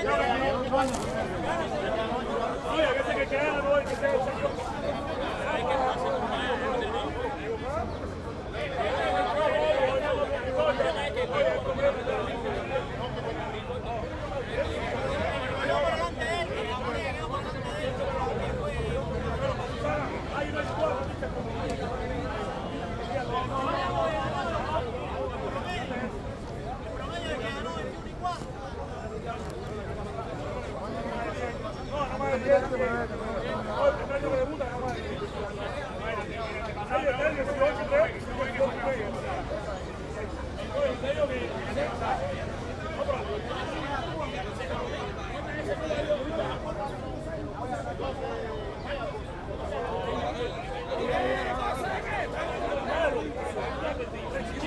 Parque Centrale, Havana, Cuba - Béisbol discussions
In Havana's Parque Central opposite Hotel Inglaterra, many men having heated discussions about béisbol.
La Habana, Cuba